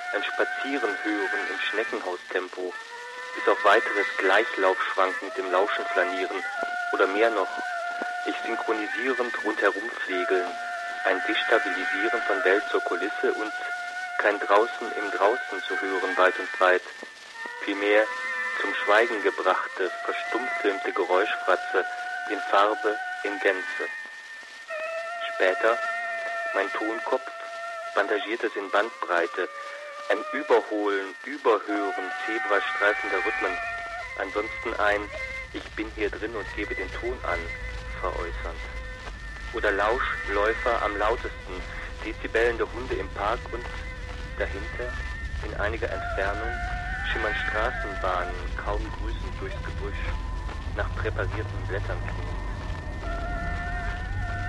program: sound constructions - no maps for these territories
compilation from various sounds, related to or important for the maps project
Berlin, Deutschland